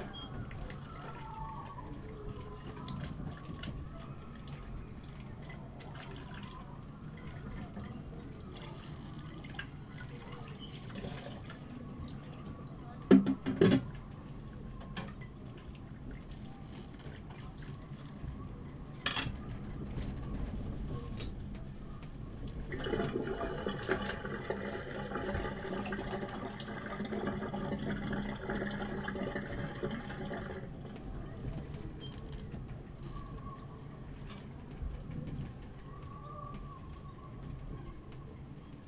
workum, het zool: marina, berth h - the city, the country & me: marina, aboard a sailing yacht
doing the dishes, music of a party from a nearby camping place
the city, the country & me: july 18, 2009